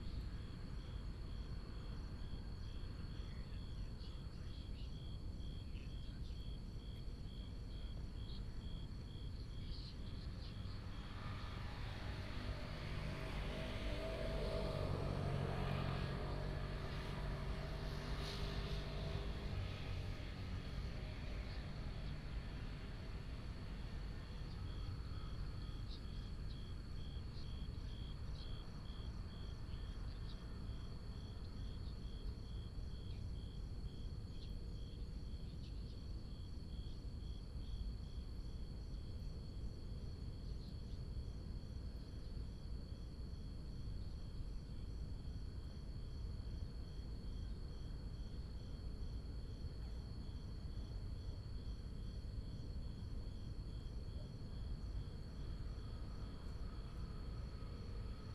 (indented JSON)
{
  "title": "中科西區水塔, Xitun Dist., Taichung City - Subtle whine",
  "date": "2017-10-09 19:27:00",
  "description": "Subtle whine, Traffic sound, Binaural recordings, Sony PCM D100+ Soundman OKM II",
  "latitude": "24.20",
  "longitude": "120.60",
  "altitude": "243",
  "timezone": "Asia/Taipei"
}